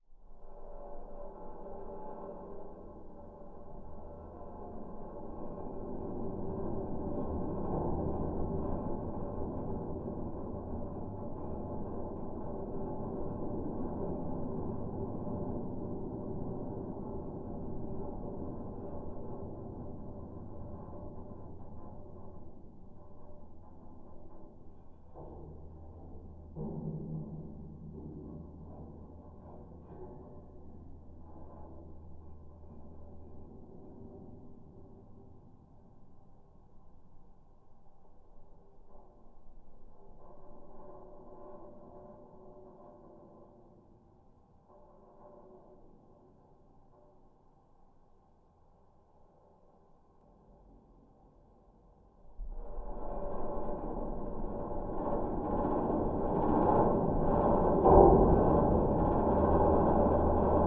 Good Vibrations, Bentonville, Arkansas, USA - Coler Bridge
Geophone recording from a bridge that suspends above the Good Vibrations Trail in Coler Mountain Bike Reserve.